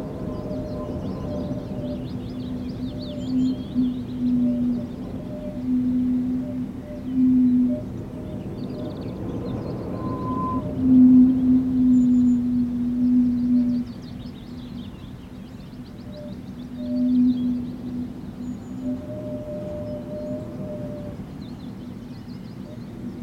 Kirkby Stephen, UK - Singing Viaduct
Multi-channel recording of Smardale Gill Viaduct, a disused railway viaduct in Smardale Gill nature reserve. The stantions on the viaduct whistle as the wind blows across them. Recorded on a sunny and windy mid May afternoon. No people around but a large raven can be heard flying around the valley. Part of a series of recordings for A Sound Mosaic of the Westmorland Dales. Peral M-s stereo mic, 2 x DPA 4060 inside two stantions, 2 x Barcus Berry contact mics on a wire mesh attached to the stantions